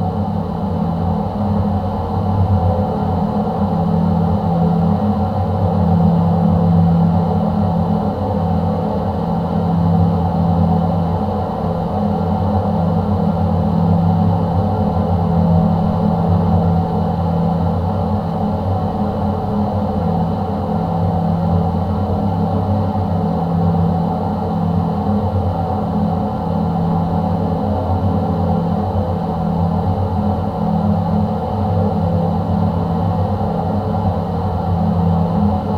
Biliakiemis, Lithuania, dam drone
a pair contact mics and geophone on the massive metallic tap of the dam